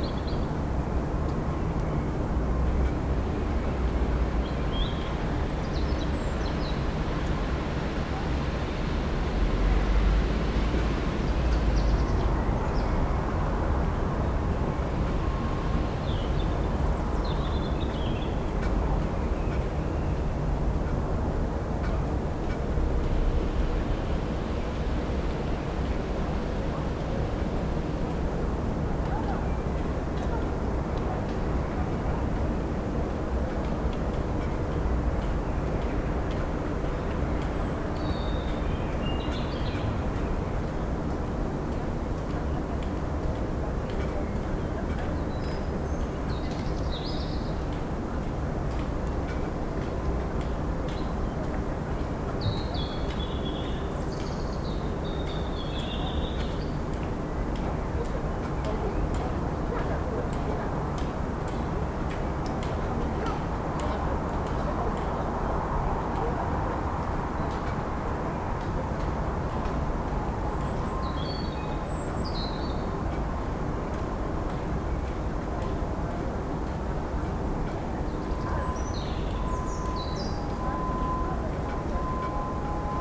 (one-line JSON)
{"title": "Rożana 2 - Park Grabiszyński słyszany z werandy", "date": "2021-04-22 18:51:00", "description": "Słońce uformowało się około 4,567 mld lat temu na skutek kolapsu grawitacyjnego obszaru w dużym obłoku molekularnym. Większość materii zgromadziła się w centrum, a reszta utworzyła orbitujący wokół niego, spłaszczony dysk, z którego ukształtowała się pozostała część Układu Słonecznego. Centralna część stawała się coraz gęstsza i gorętsza, aż w jej wnętrzu zainicjowana została synteza termojądrowa. Naukowcy sądzą, że niemal wszystkie gwiazdy powstają na skutek tego procesu. Słońce jest typu widmowego G2 V, czyli należy do tzw. żółtych karłów ciągu głównego; widziane z Ziemi ma barwę białą. Oznaczenie typu widmowego „G2” wiąże się z jego temperaturą efektywną równą 5778 K (5505 °C), a oznaczenie klasy widmowej „V” wskazuje, że Słońce, należy do ciągu głównego gwiazd i generuje energię w wyniku fuzji jądrowej, łącząc jądra wodoru w hel. Słońce przetwarza w jądrze w ciągu sekundy około 620 mln ton wodoru.", "latitude": "51.09", "longitude": "16.99", "altitude": "123", "timezone": "Europe/Warsaw"}